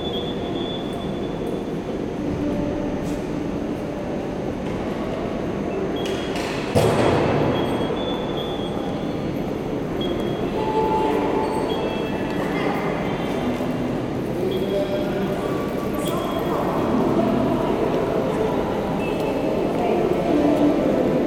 Maastricht, Pays-Bas - Red light signal
The red light indicates to pedestrian they can cross the street. It produces a sound which is adaptative to the number of cars, a camera films the traffic. As this, sometimes the duration is long, other times it's short.
Maastricht, Netherlands, 20 October, ~14:00